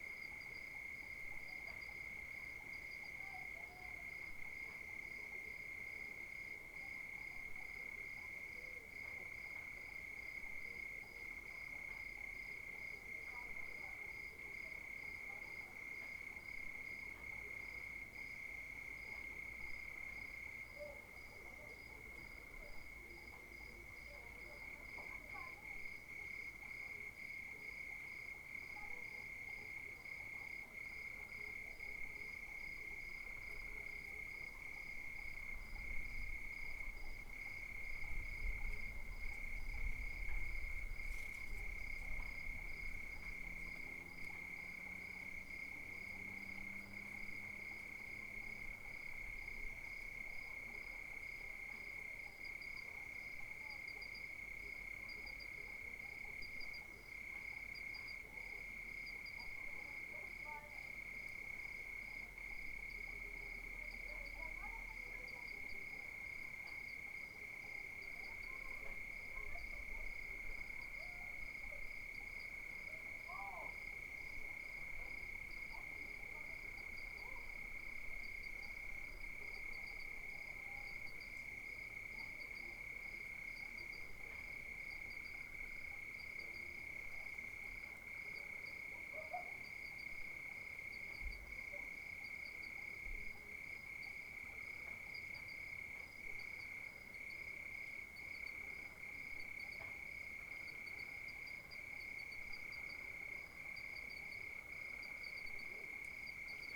Ruelle des Artisans, CILAOS Réunion - 20190120 2300
Paysage sonore nocturne au clair de lune.
ZoomH4N
20 January 2019, 23:00